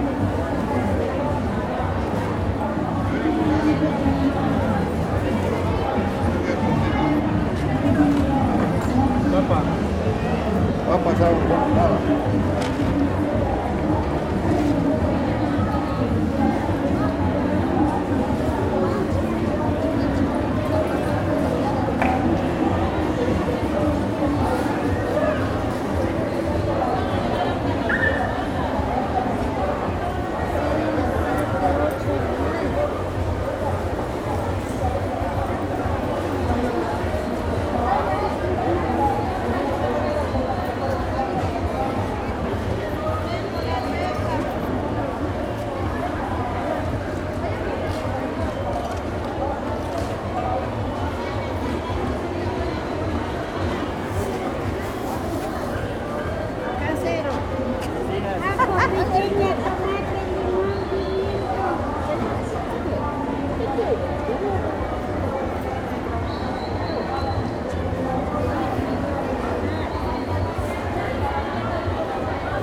Mariscal Sucre, Saquisilí, Equador - Mercado Indígena de Saquisili - Indigenous Market of Saquisili
Caminhada pelo Mercado Indígena de Saquisili, Equador.
Hike through the Indigenous Market of Saquisili, Ecuador.
Gravador Tascam DR-05.
Tascam recorder DR-05.
Saquisilí, Ecuador, 11 April, ~09:00